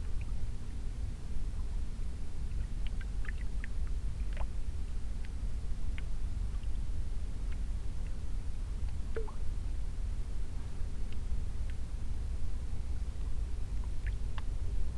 bärwalder see, silent waves at a lake plattform
a mellow wind and the silent waves of the lake hitting the concrete lake plattform
soundmap d - social ambiences & topographic field recordings